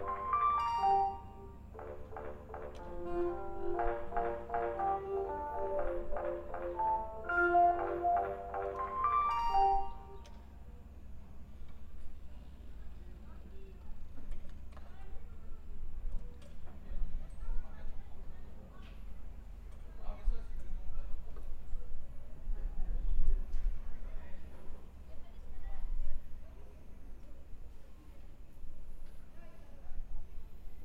Rue du Stade, Piney, France - Récréation dans la cour du collège
C'est l'heure de la pause de l'après-midi au collège des Roises, les élèves sortent dans la cour.
France métropolitaine, France